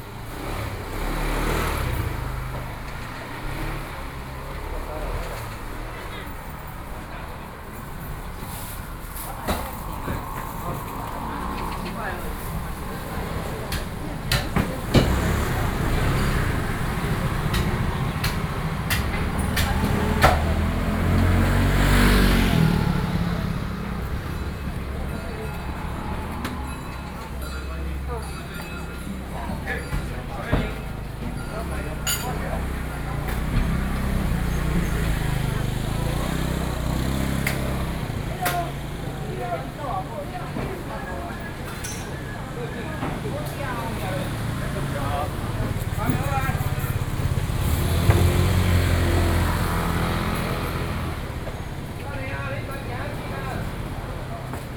Zhongzheng Rd., 汐止區, .New Taipei City - Traditional markets